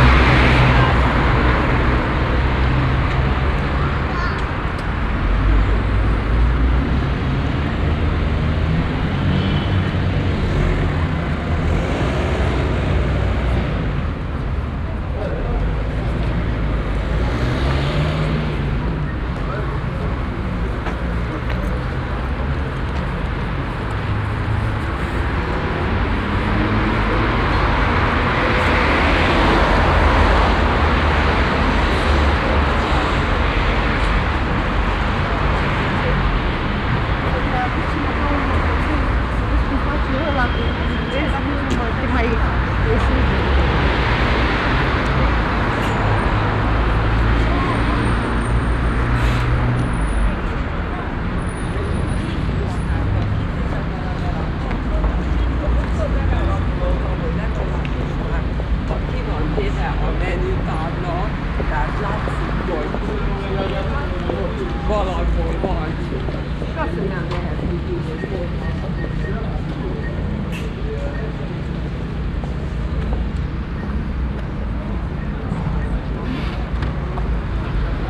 Central Area, Cluj-Napoca, Rumänien - Cluj, taxi stand, street traffic and passengers
On the street at a taxi stand. The sounds of passing by traffic, parking and going taxi's and passengers walking and talking.
international city scapes - topographic field recordings and social ambiences
17 November 2012, 13:00